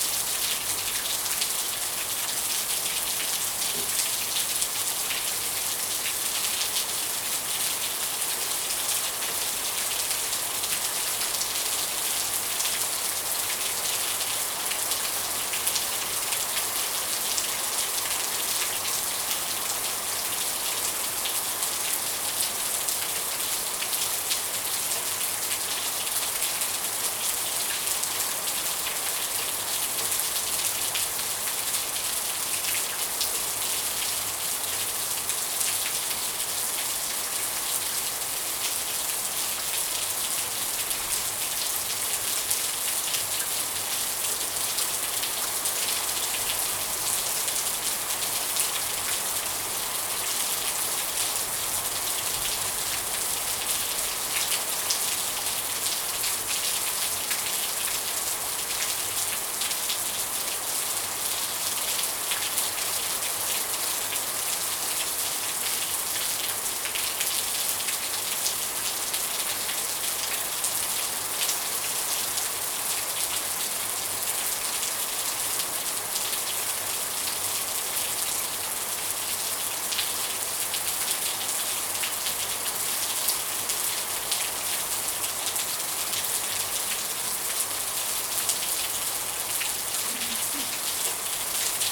Broads Rd, Lusaka, Zambia - Lusaka heavy rains....
soundscapes of the rainy season...